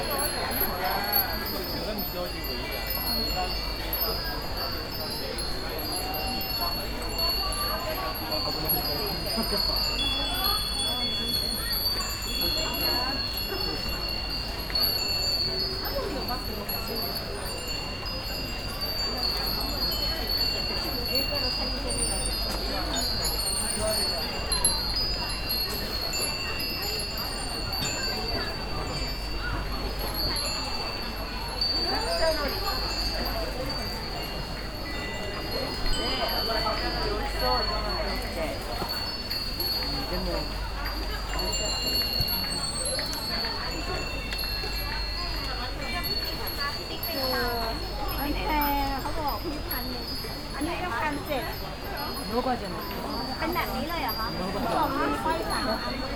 tokyo, asakusa, stores, wind bells
a large scale of small stores leading to the asakusa temple - a t one store an ensemble of wind bells
international city scapes - social ambiences and topographic field recordings
28 July 2010, 07:38